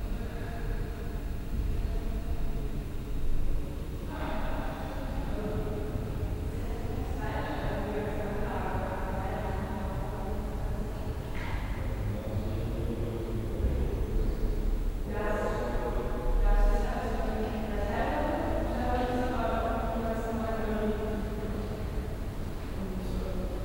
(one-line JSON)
{"title": "Düsseldorf, Eiskellerstrasse, Kunstakademie", "date": "2008-08-20 09:29:00", "description": "Gang durch das Treppenhaus, durch den Hauptgang Erdgeschoss bis zum Ausgang Eiskellerstrasse\nsoundmap nrw: social ambiences/ listen to the people - in & outdoor nearfield recordings", "latitude": "51.23", "longitude": "6.77", "altitude": "40", "timezone": "Europe/Berlin"}